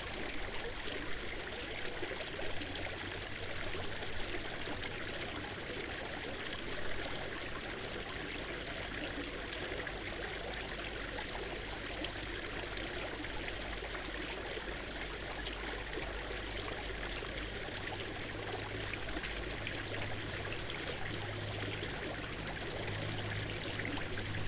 Lithuania, front fountain of Taujenai manor
16 June 2013, 18:00